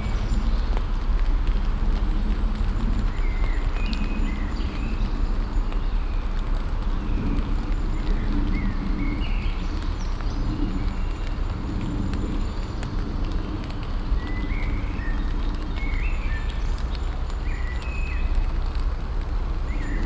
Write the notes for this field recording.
Light rain falling on the leaves in the forest, singing birds, plane noise at the beginning, omnipresent traffic noise floor, cars crossing the expansion gaps of the two bridges about 1.5 km left and right to this position. Very low frequent rumble caused by a ship passing on the Kiel-Canal. Binaural recording with Tascam DR-100 MK III, Soundman OKM II Klassik microphone.